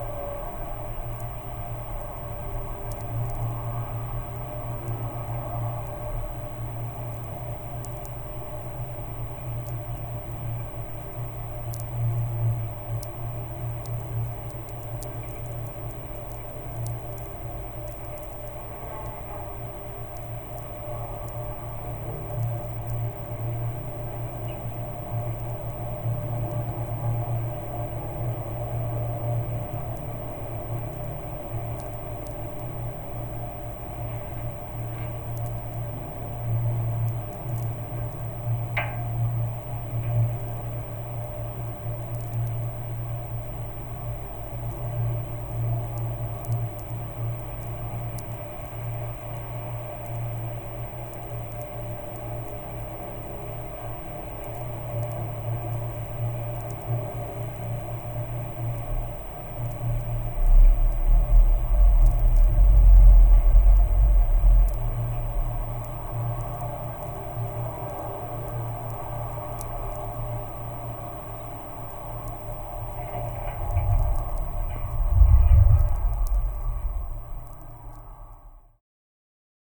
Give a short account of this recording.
the place of my constant returns...big abandoned train bridge from soviet times. it was built but, as it happens, no trains crossed the bridge. it stands like some monument of vanity. the recording was made in absolutely still day. very amplified contact microphones on metallic costructions of the bridge and electromagnetic antenna. and ghost trains appears